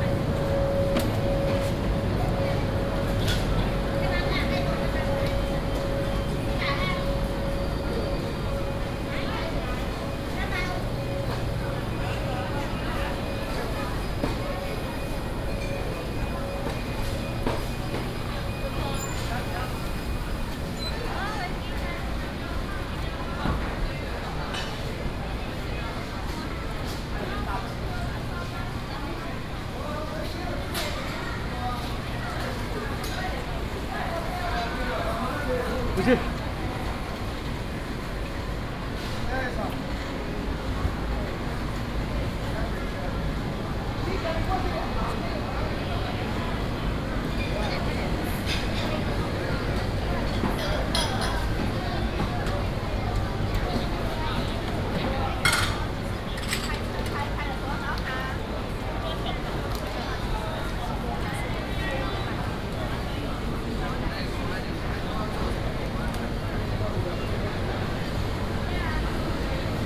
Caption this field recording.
Walking through the dusk market, Air conditioning noise, Binaural recordings, Sony PCM D100+ Soundman OKM II